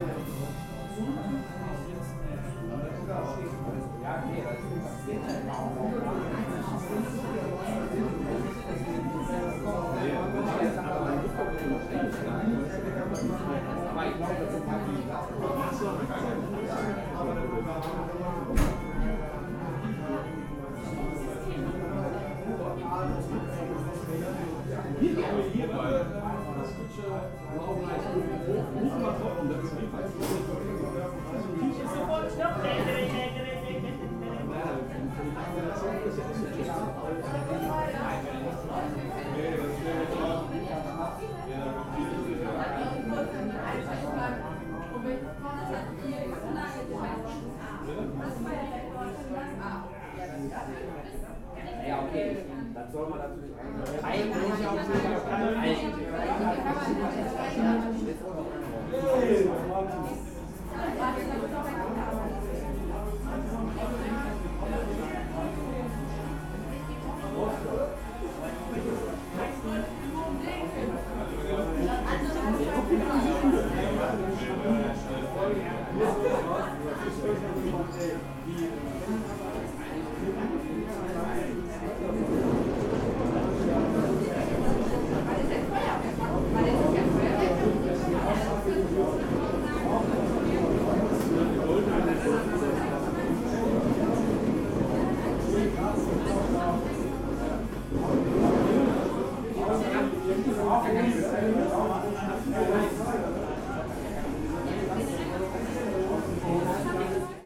Bochum, Deutschland - zur postkutsche
zur postkutsche, viktoriastr. 16, 44787 bochum